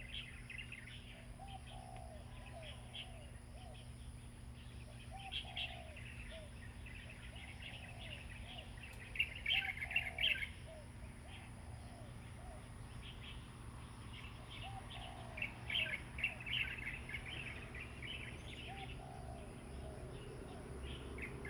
{
  "title": "杉福村, Hsiao Liouciou Island - Birds singing",
  "date": "2014-11-02 08:03:00",
  "description": "Birds singing, Traffic Sound\nZoom H2n MS+XY",
  "latitude": "22.34",
  "longitude": "120.36",
  "altitude": "12",
  "timezone": "Asia/Taipei"
}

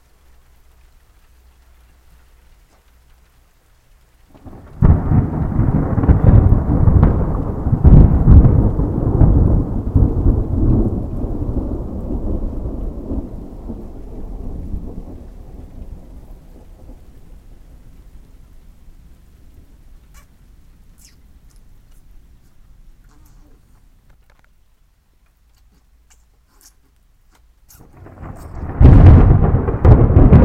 {
  "title": "thunderstorm - Propach, thunderstorm",
  "description": "recorded june 1, 2008 - project: \"hasenbrot - a private sound diary\"",
  "latitude": "50.85",
  "longitude": "7.52",
  "altitude": "263",
  "timezone": "GMT+1"
}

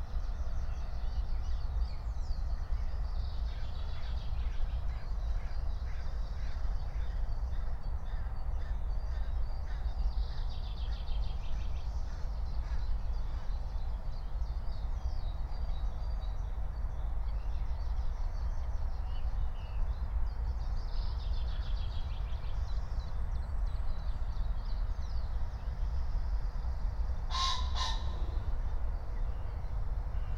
{
  "date": "2022-04-14 07:00:00",
  "description": "07:00 Berlin Buch, Lietzengraben - wetland ambience",
  "latitude": "52.64",
  "longitude": "13.46",
  "altitude": "49",
  "timezone": "Europe/Berlin"
}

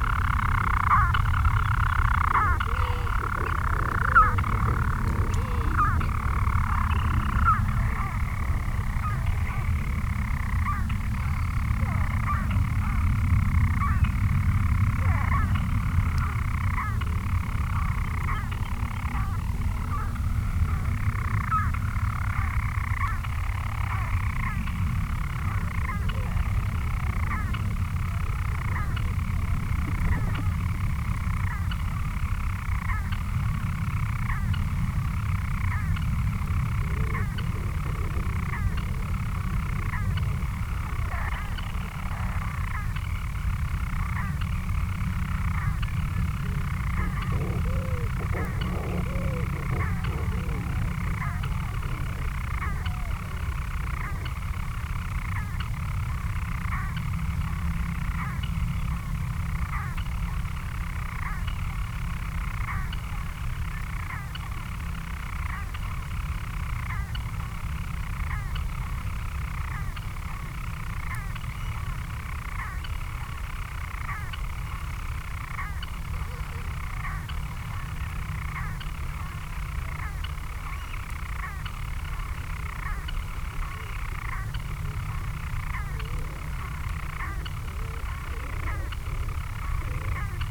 {
  "title": "Marloes and St. Brides, UK - european storm petrel ...",
  "date": "2016-05-16 04:20:00",
  "description": "Skokholm Island Bird Observatory ... storm petrel singing ..? towards the end of this clip manx shearwaters can be heard leaving their burrows heading out to sea ...",
  "latitude": "51.70",
  "longitude": "-5.27",
  "altitude": "34",
  "timezone": "Europe/London"
}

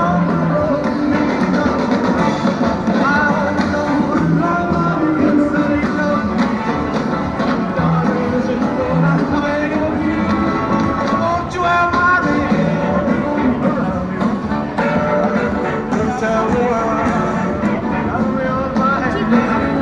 {
  "title": "Calçadão de Londrina: Artista de rua: cover de Elvis Presley - Artista de rua: cover de Elvis Presley / Street Artist: cover by Elvis Presley",
  "date": "2017-07-08 11:45:00",
  "description": "Panorama sonoro: um artista de rua imitava o músico norte-americano Elvis Presley, sábado pela manhã, em meio ao Calçadão, como forma de conseguir dinheiro de contribuições voluntárias de pedestres. Ele se vestia e procurava dançar como Elvis, utilizando uma caixa de som para reproduzir suas músicas. A apresentação atraia a atenção dos pedestres, que sorriam, comentavam e, até mesmo, tiravam fotos com o artista. Algumas contribuíam com algum dinheiro. Nas proximidades, um estabelecimento bancário era reformado e uma feirinha de produtos artesanais acontecia.\nSound panorama: A street performer imitated American musician Elvis Presley on Saturday morning in the middle of the Boardwalk as a way to get money from voluntary pedestrian contributions. He dressed and sought to dance like Elvis, using a sound box to play his music. The presentation attracted the attention of pedestrians, who smiled, commented and even took pictures with the artist. Some contributed some money.",
  "latitude": "-23.31",
  "longitude": "-51.16",
  "altitude": "617",
  "timezone": "America/Sao_Paulo"
}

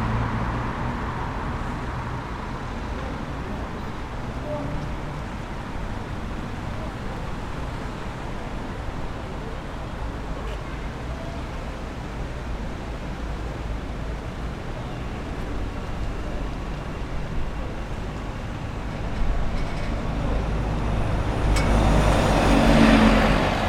{
  "title": "Great Victoria Street",
  "date": "2020-08-28 15:30:00",
  "description": "Next to the Europa Hotel, there is the Belfast Bus Station for local and distance commute around the island. Multiple times people were going in and out, either leaving/returning from work, a weekend trip, or just heading home from the city. People are trying to find the normal in their lives, certain areas have reopened, and others remain closed. People and vehicle traffic have retaken the sound of the city, masking the clarity of intricate sonic activities that were occurring throughout the lockdown. It begs to ask, what are we missing every day when we put ourselves everywhere?",
  "latitude": "54.60",
  "longitude": "-5.93",
  "altitude": "13",
  "timezone": "Europe/London"
}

